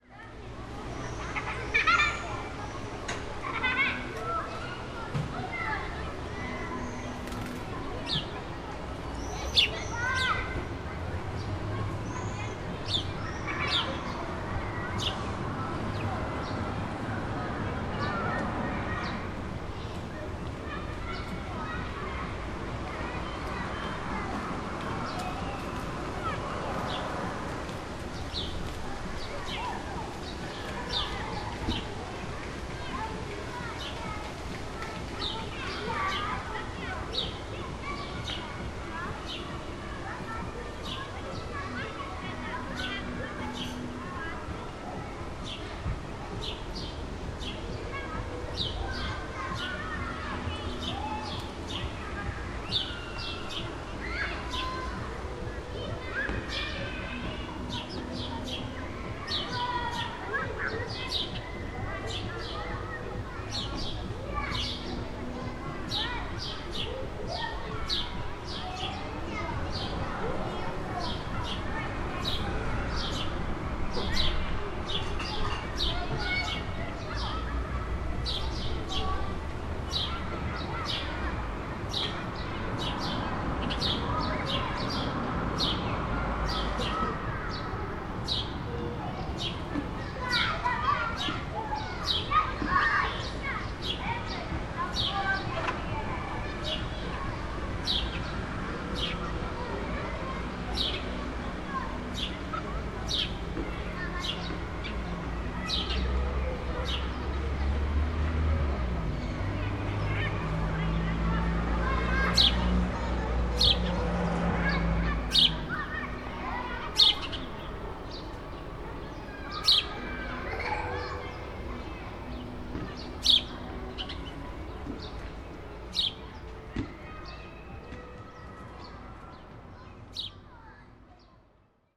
Ramenskoye - A nice day.
Childrens play, Sparrows chirp, Wind noise.